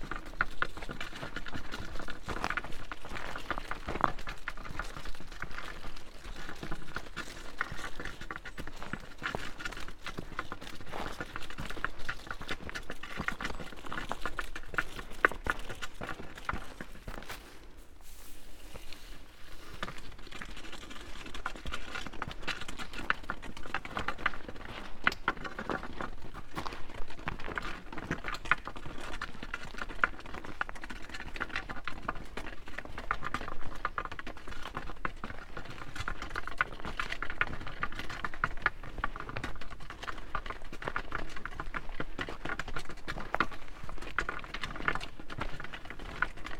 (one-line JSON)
{"title": "extantions, Lovrenška jezera, Slovenia - two branches", "date": "2012-10-21 14:39:00", "description": "a walk with expanded arms - two branches, downwards on a rocky pathway through forest", "latitude": "46.47", "longitude": "15.32", "altitude": "1461", "timezone": "Europe/Ljubljana"}